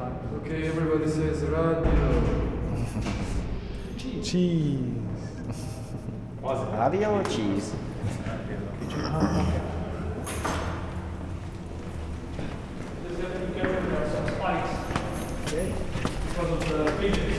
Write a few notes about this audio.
on the way to the roof, in order to mount an antenna for a temporary pirate radio station during kiblix festival